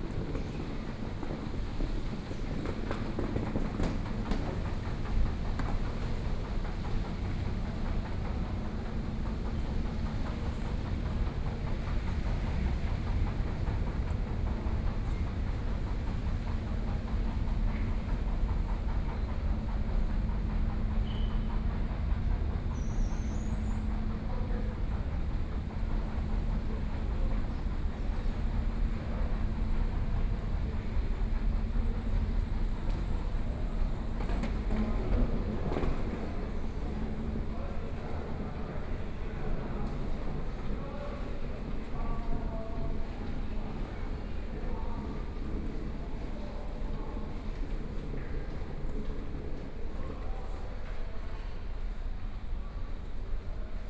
Hualien Station, Taiwan - Walking in the station
From the station hall, Walking through the underground passage, To the station platform, Zoom H4n + Soundman OKM II
Hualian City, Hualien County, Taiwan, 15 January